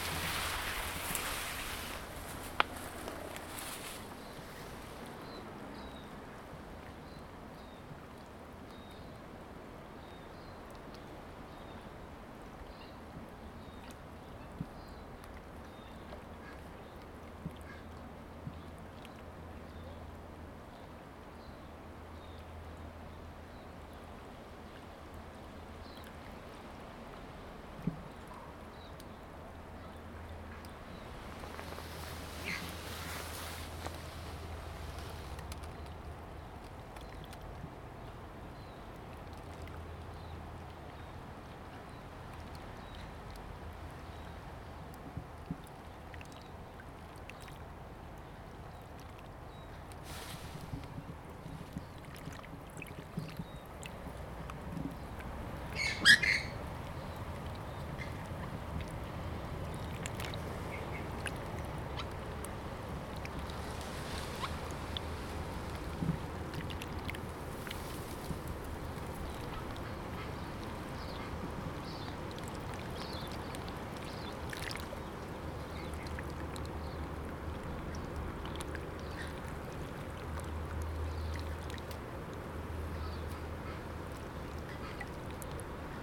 Pierce Road, Milford, Auckland, New Zealand - waterfowl on Lake Pupuke
Recording made while standing on the shore of Lake Pupuke
13 October 2020, 08:42